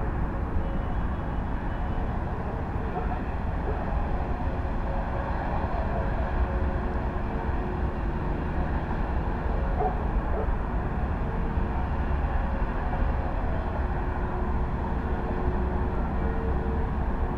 steady flow of water, slightly waved with southwestern winds, traffic hum from left and right side
Maribor, Slovenia, 2 September 2013